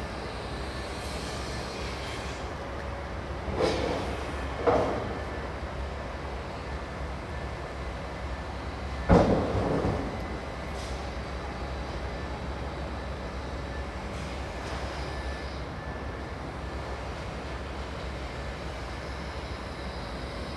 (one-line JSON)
{"title": "Binckhorst Harbour, Saturnusstraat", "date": "2011-11-24 15:00:00", "description": "Metal thrown into truck. harbour ambience.", "latitude": "52.07", "longitude": "4.35", "altitude": "2", "timezone": "Europe/Amsterdam"}